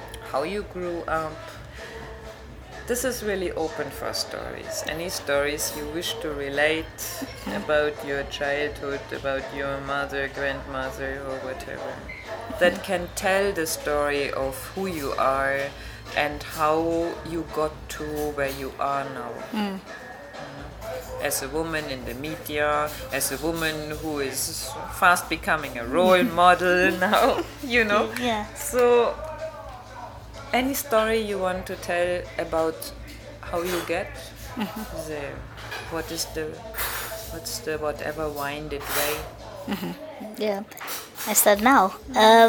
Makokoba, Bulawayo, Zimbabwe - Soneni Gwizi talking life…
And here’s the beginning of the interview with Soneni, the beginning of her story….
27 October 2012, ~12:00